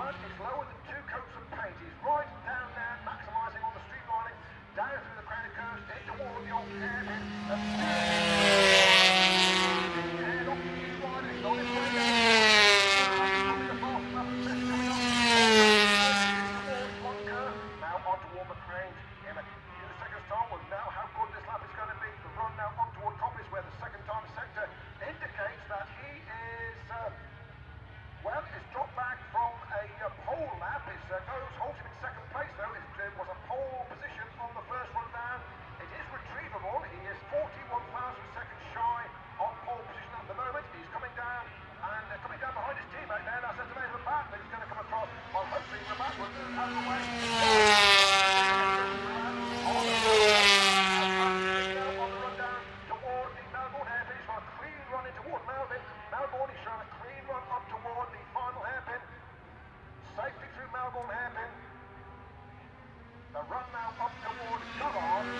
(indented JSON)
{
  "title": "Unnamed Road, Derby, UK - british motorcycle grand prix 2007 ... 125 qualifying 2 ...",
  "date": "2007-06-23 13:10:00",
  "description": "british motorcycle grand prix 2007 ... 125 qualifying 2 ... one point stereo mic to minidisk ...",
  "latitude": "52.83",
  "longitude": "-1.37",
  "altitude": "81",
  "timezone": "Europe/London"
}